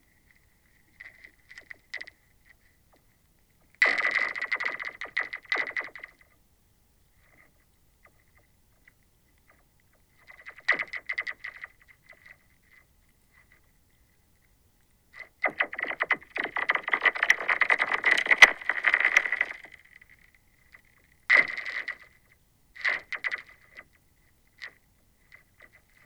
{
  "title": "Fobney Island Nature Reserve Reading UK - Cracking Lake Ice",
  "date": "2021-02-13 08:45:00",
  "description": "I put a couple of Hydrophones just below the surface of the frozen lake, the ice was roughly 4cm thick and cracked under my weight producing the sounds as I walked on it. Olympus LS10",
  "latitude": "51.43",
  "longitude": "-0.99",
  "altitude": "39",
  "timezone": "Europe/London"
}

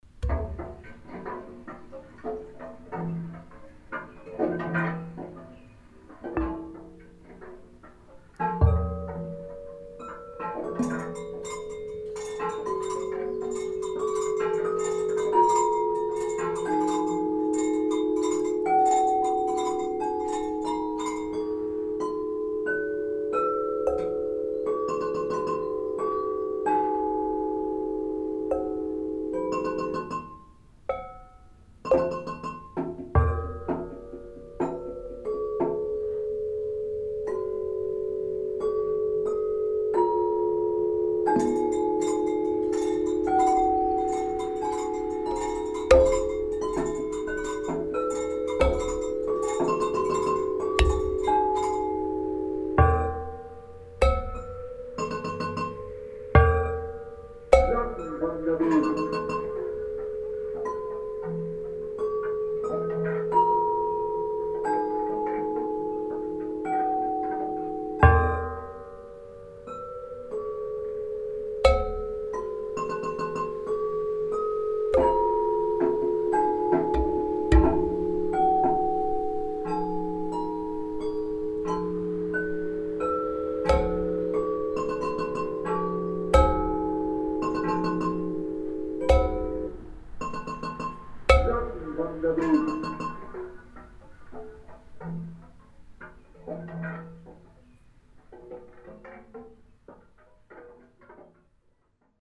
{
  "title": "hoscheid, parking place, sound object",
  "date": "2011-08-09 23:51:00",
  "description": "At the parking place of the village. A sound object in form of a metal table with different sized objects on concepted by Michael Bradke. A percussive play of the objects effects the sample playback of sounds from the Klangwanderweg.\nHoscheid, Parkplatz, Geräuschobjekt\nAuf dem Parkplatz des Ortes. Ein Geräuschobjekt in Form eines Metalltisches mit verschieden großen Objekten darauf, konzipiert von Michael Bradke. Ein Schlagspiel mit den Geräuscheffekten der Objekte vom Klangwanderweg.\nMehr Informationen über den Hoscheid Klangwanderweg finden Sie unter:\nHoscheid, parking, élément sonore\nSur le parking du village. Un objet pour faire des sons conçu par Michael Bradke, se présentant sous la forme d’une table en métal avec des objets de différente taille. Le jeu de percussion avec les objets donne un échantillon de sons du Sentier Sonore.\nInformations supplémentaires sur le Sentier Sonore de Hoscheid disponibles ici :\nmore informations about the Hoscheid Klangwanderweg can be found here:",
  "latitude": "49.95",
  "longitude": "6.08",
  "altitude": "477",
  "timezone": "Europe/Luxembourg"
}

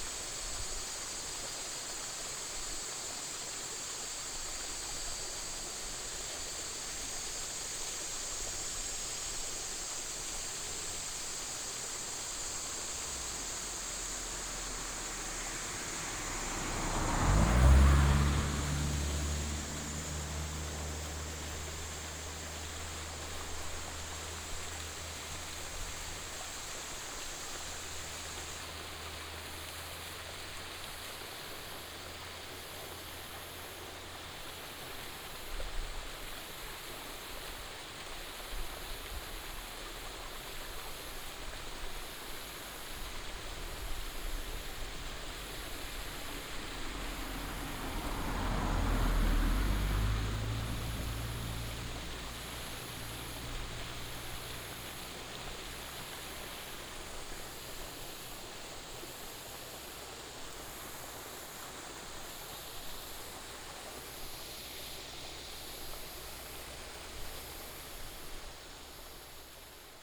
{
  "title": "羅馬公路李生橋, Fuxing Dist., Taoyuan City - Stream and Cicada",
  "date": "2017-08-14 15:55:00",
  "description": "stream, Cicada, traffic sound, birds sound, Next to the bridge, Binaural recordings, Sony PCM D100+ Soundman OKM II",
  "latitude": "24.79",
  "longitude": "121.28",
  "altitude": "355",
  "timezone": "Asia/Taipei"
}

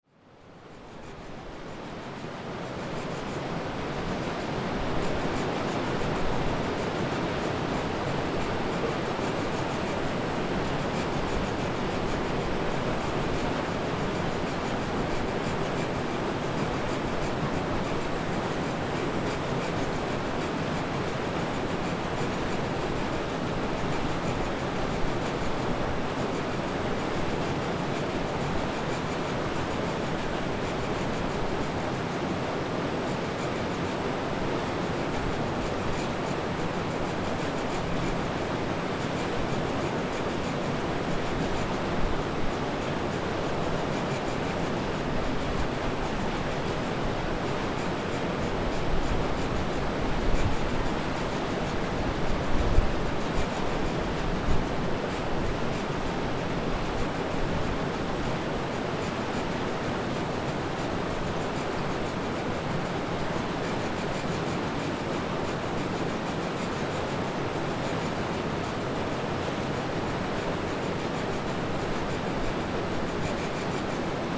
{
  "title": "Lithuania, Uzpaliai, turbine at hydro power plant - turbine at hydro power plant",
  "date": "2012-03-29 16:15:00",
  "description": "turbine and falling water at the hydro power plant dam",
  "latitude": "55.65",
  "longitude": "25.58",
  "altitude": "89",
  "timezone": "Europe/Vilnius"
}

